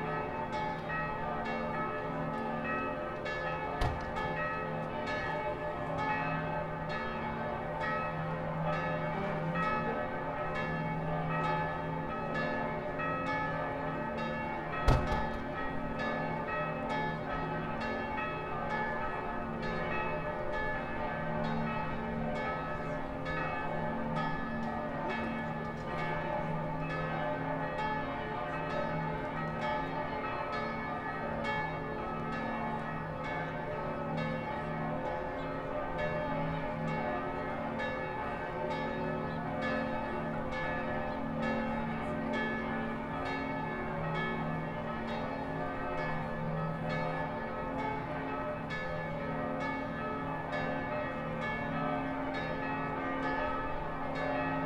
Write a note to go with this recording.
Recording of church bells of all churches in Bratislava center city ringing at the same time. This was a special occation on the day of state funeral of Slovakia's president Michal Kováč. Recorded from the top of Michael's Tower.